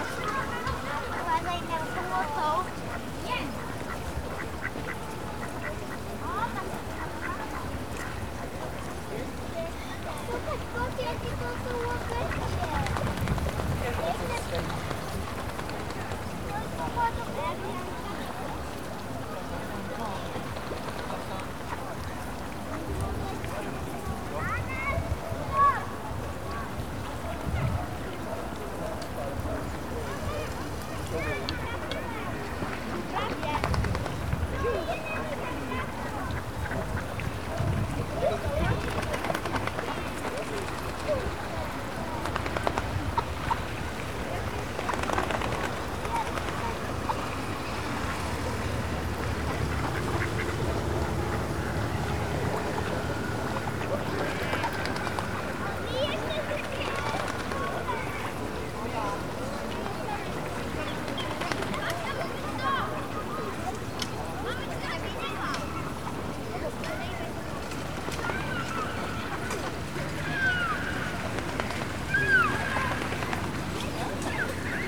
Poznan, Morasko, UAM Campus UAM - at the frozen pond
a few dozen ducks and a few swans occupying a frozen pond. lots of strollers around the area due to very warm Sunday.
Poznan, Poland, 23 February 2014